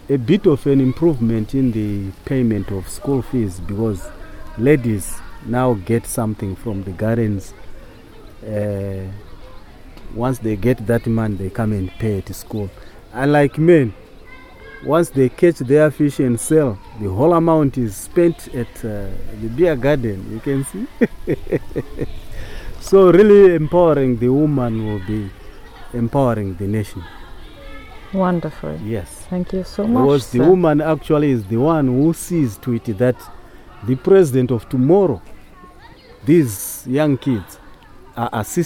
Sebungwe Primary School, Binga, Zimbabwe - Women in fishing and gardening
Mr Munenge welcomes and praises the work, which Zubo Trust has been doing to empower the women of this area through garden, fishing and fish farming projects.
Zubo Trust is a Women's organisation bringing women together for self-empowerment
24 May 2016